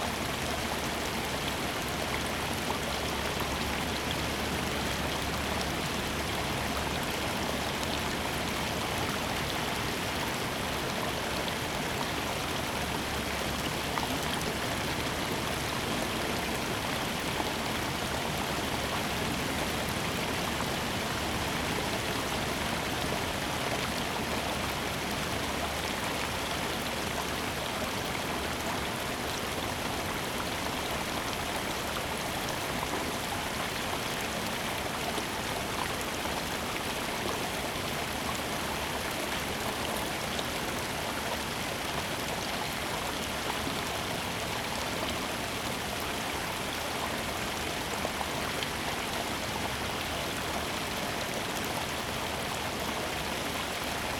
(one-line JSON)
{"title": "Black Apple Creek, Bentonville, Arkansas, USA - Black Apple Creek", "date": "2022-04-23 14:11:00", "description": "Recording of Black Apple Creek and two mountain bikes crossing creek where trail passes through it.", "latitude": "36.39", "longitude": "-94.21", "altitude": "386", "timezone": "America/Chicago"}